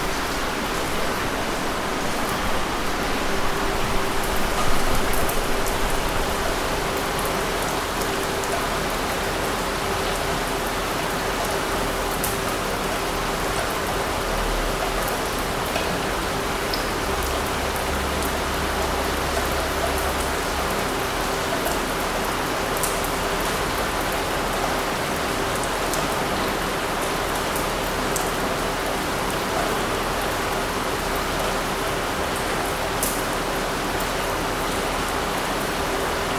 rainy day at Drill Hall, Portland, Dorset - rain at Drill Hall